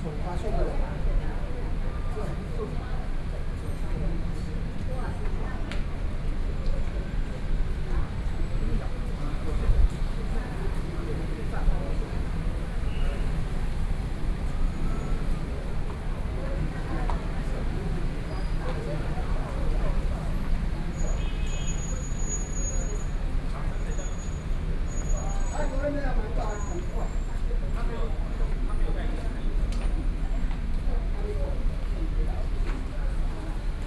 NTU Hospital Station, Taipei City - MRT entrance
in the National Taiwan University Hospital Station, The crowd, Sony PCM D50 + Soundman OKM II